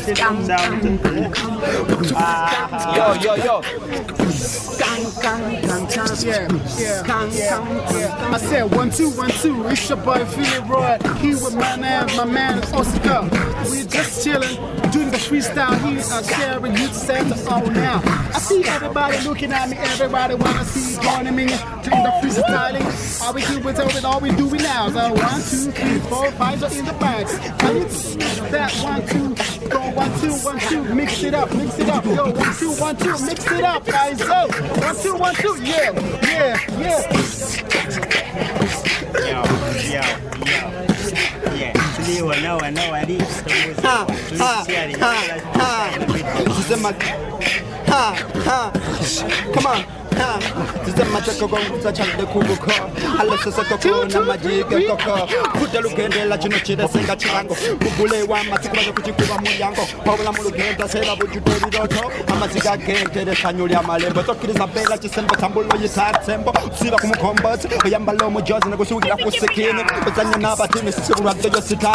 Sharing Youth Centre, Nsambya, Kampala, Uganda - Breakdance Project Uganda - beat boxing...
…after a day of making recordings with members of the Breakdance Project Uganda and its founding director, Abraham ‘Abramz’ Tekya, I catch up with a group “relaxing” in freestyle “beat boxing”…
26 July